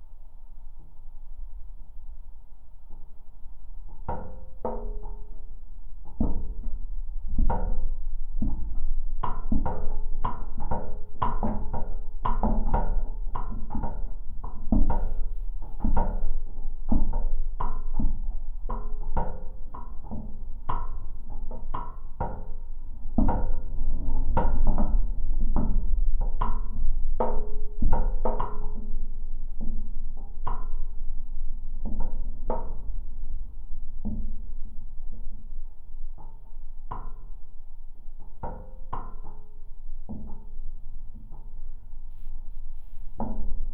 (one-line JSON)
{"title": "Sudeikiai, Lithuania, lamp pole", "date": "2021-03-02 11:45:00", "description": "Windy day. High metallic lamp pole. Geophone recording", "latitude": "55.59", "longitude": "25.68", "altitude": "140", "timezone": "Europe/Vilnius"}